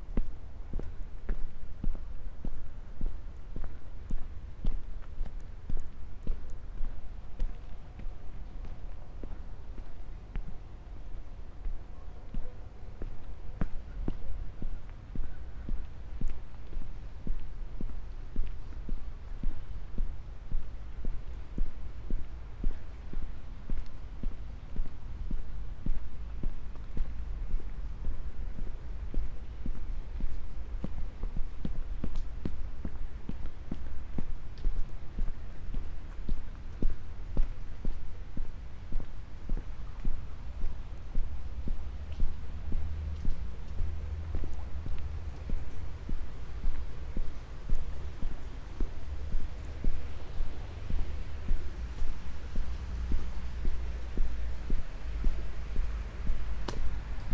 round walk in the city park near midnight with full moon rising, variety of fallen leaves, shout, fluid ambiance with rivulets due to intense rainy day - part 2